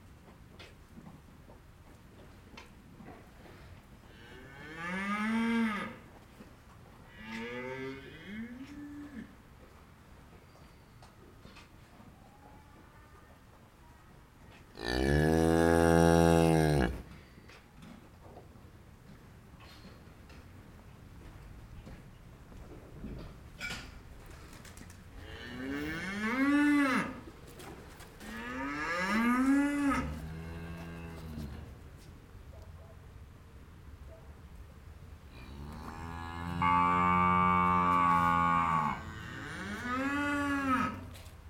Court-St.-Étienne, Belgique - The cows
We are in a quiet rural farm. Cows are hungry as always. Seeing the farmer, they are asking for food. Many thanks to Didier Ryckbosh, the farmer, to welcome me here.
Court-St.-Étienne, Belgium, 28 October 2015